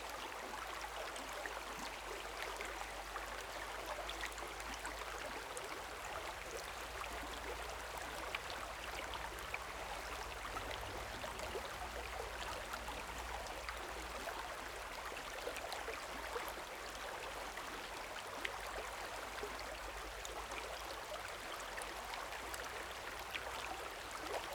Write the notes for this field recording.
stream sound, Brook, Zoom H6 XY